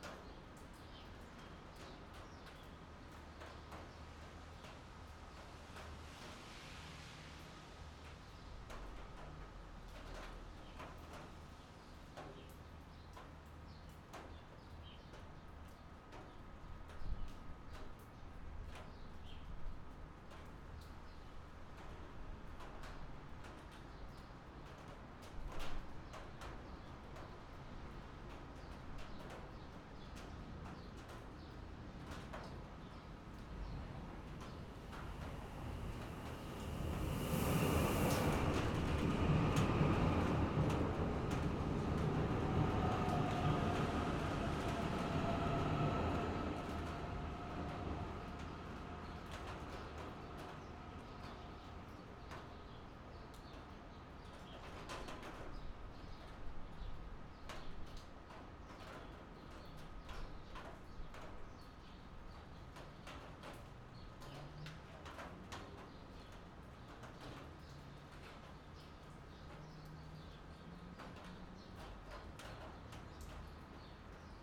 Auckland, New Zealand - Rail bridge, tin roof in rain
Under an open rail bridge there are tin roofs that protect cars from the trains above.
Sony PCM-D50, on board mics.
17 September 2016, ~15:00